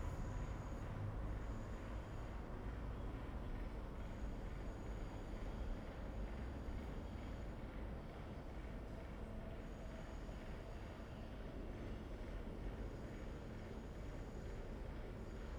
Scheepmakersstraat, Den Haag - railway bridge
pump, drilling, cars and loud train recorded under railway bridge. Soundfield Mic (ORTF decode from Bformat) Binckhorst Mapping Project